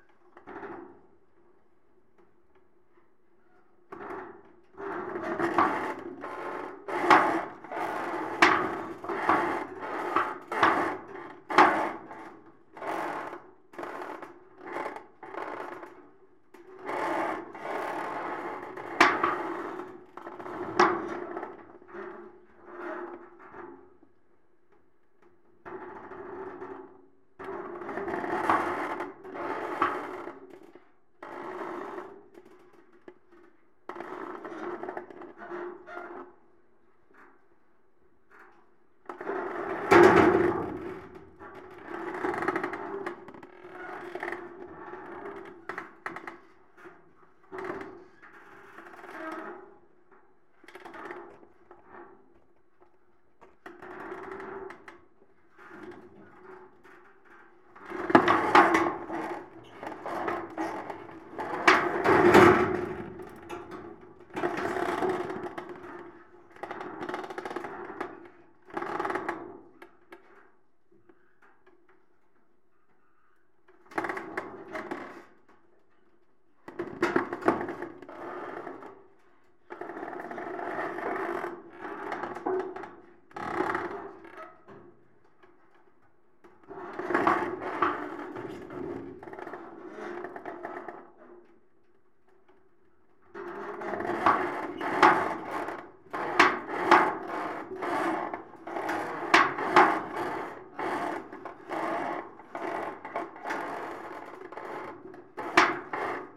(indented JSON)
{
  "title": "Av. Yves Brunaud, Toulouse, France - metalic vibration 05",
  "date": "2022-04-12 11:15:00",
  "description": "métal palisade moving by the action of the wind\n+ rubbing of tree branches\nCaptation : ZOOM H4n",
  "latitude": "43.62",
  "longitude": "1.47",
  "altitude": "165",
  "timezone": "Europe/Paris"
}